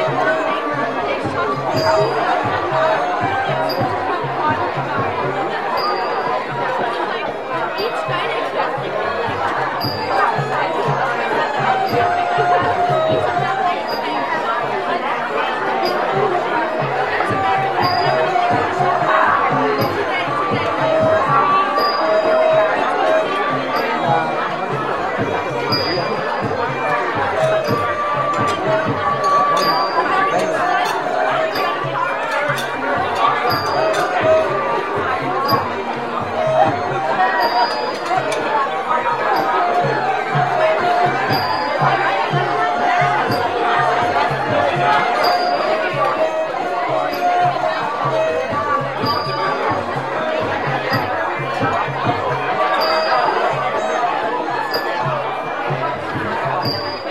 เชียงใหม่, Thailand (Khong dance dinner) 2
Khong dance dinner in Old culture center, Chiang Mai; 26, Jan, 2010
จังหวัดเชียงใหม่, ราชอาณาจักรไทย